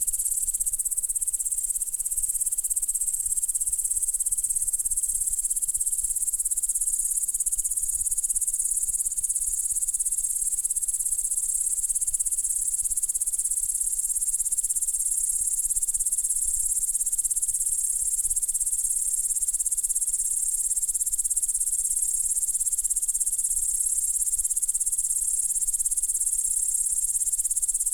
Šlavantai, Lithuania - Grasshoppers
Grasshoppers chirping away in the evening. Recorded with ZOOM H5.